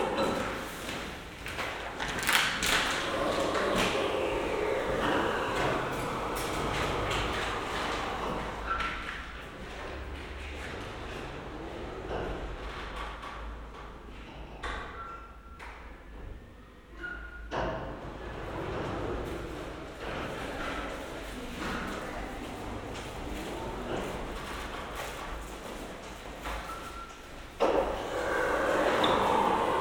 automatic doorway at the main entrance, visitors
the city, the country & me: september 6, 2012
6 September 2012, 13:00